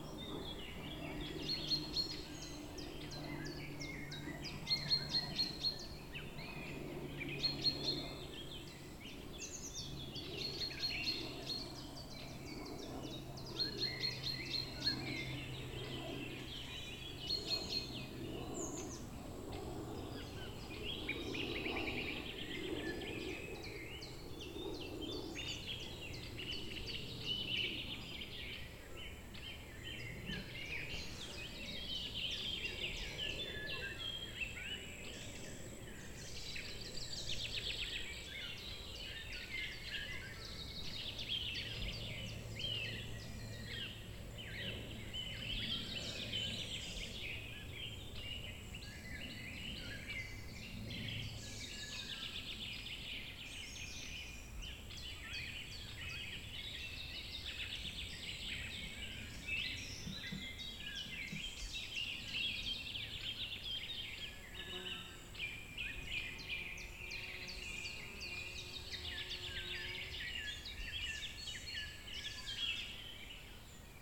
Via Rhôna Serrières-en-Chautagne, France - Calme Via Rhôna
Chants d'oiseaux dans la peupleraie de Chautagne, arrêt sur la Via Rhôna pour profiter du calme des lieux.